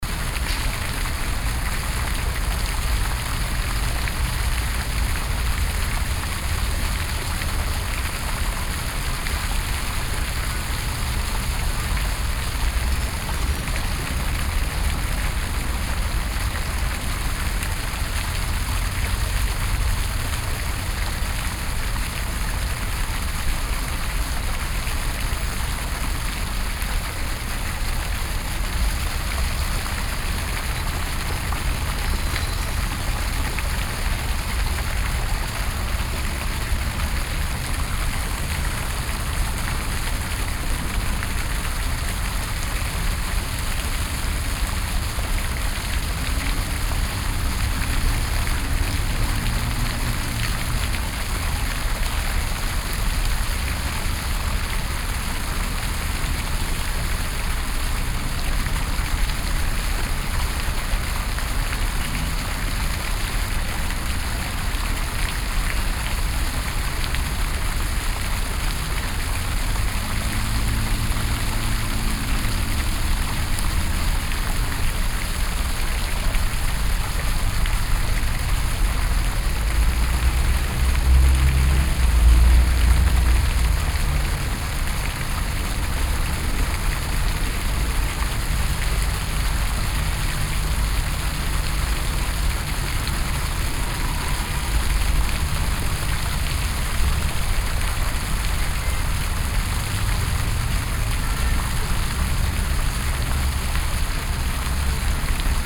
Washington DC, Dupont Circle, Dupont Circle Fountain
USA, Virginia, Washington DC, Fountain, Binaural